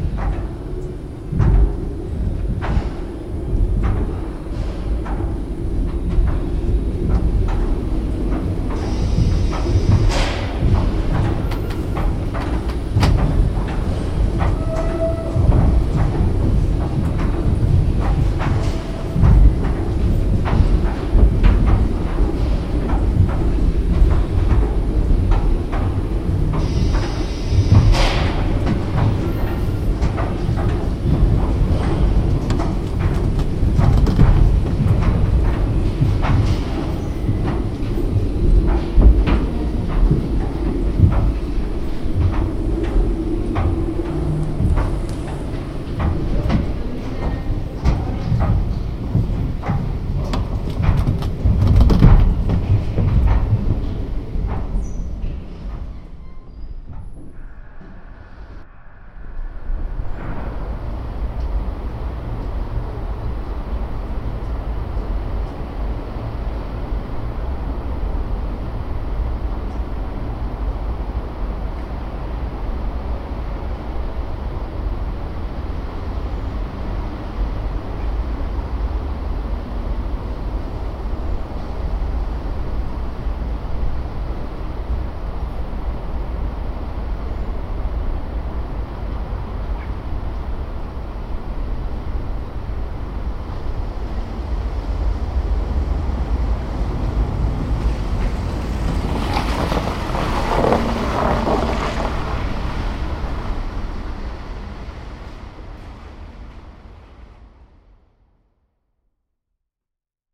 Technical University, Pater noster
paternostyer in the ground floor of the Faculty of Electro/engineering.